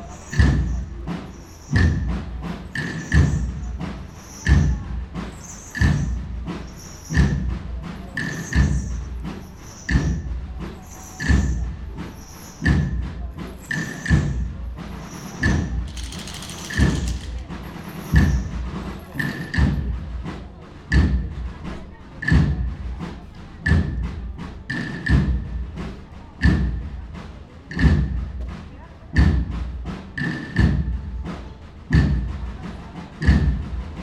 Semana Santa Beat
Semana Santa procession. An exceptional rhythm that focuses on slow progress is implemented magnificently. This specific beat helps carry out all duties like carrying heavy statues and big crosses.